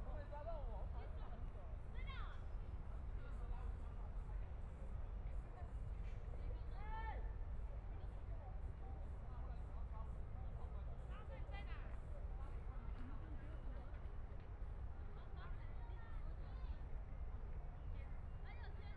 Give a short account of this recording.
迷宮花園, Traffic Sound, Binaural recordings, Zoom H4n+ Soundman OKM II